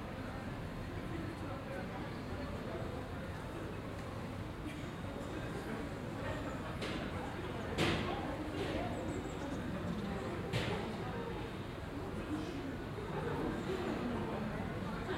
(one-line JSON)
{"title": "Alma, Woluwe-Saint-Lambert, Belgique - Students and metro", "date": "2022-01-13 16:00:00", "description": "Conversations, a few birds, metro and a plane.\nTech Note : SP-TFB-2 binaural microphones → Sony PCM-D100, listen with headphones.", "latitude": "50.85", "longitude": "4.45", "altitude": "67", "timezone": "Europe/Brussels"}